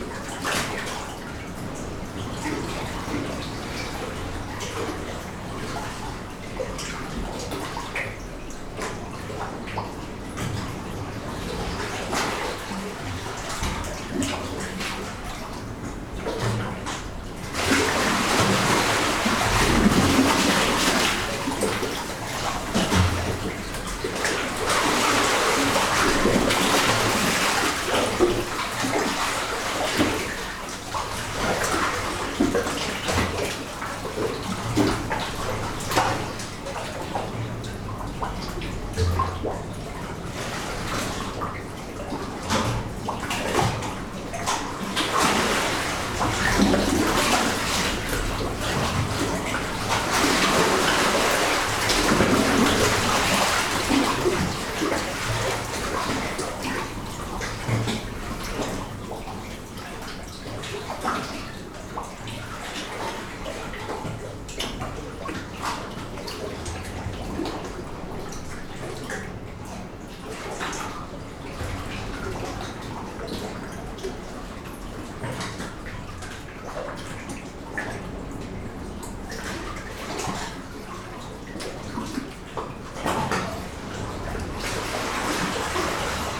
Peyia, Cyprus - Blow Hole, Cyprus
Found this little blow hole in the sea cave systems near the shipwreck of Edro III. There were two fissures to "post" microphones through. This selection is a short segment extracted from a 75 minute recording. I suspended 2 Brady (Primo capsule) mics to within 50 cm of the water surface recording to Olympus LS11. I was entranced by the immediate and immersive experience. The resonance and reverberation within the cave, the subtle and gentle rhythms and splashings with the pedal note of the waves breaking just along the coast. A beautiful location, we sunbathed (17C!) and enjoyed a shimmering, calm sea in that inexplicable winter sunlight.